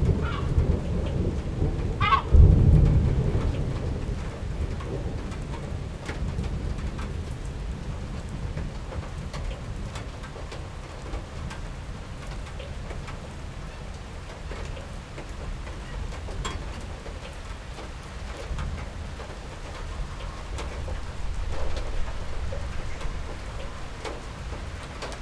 Irmingersgade (Blegdamsvej), København, Danmark - Sound of rain and thunder.
Sound of rain on the balcony and the sound of thunder and sound of traffic on wet asphalt in the background.
Recorded with zoom H6 and Rode ntg3. Øivind Weingaarde.
Københavns Kommune, Region Hovedstaden, Danmark, 12 July 2022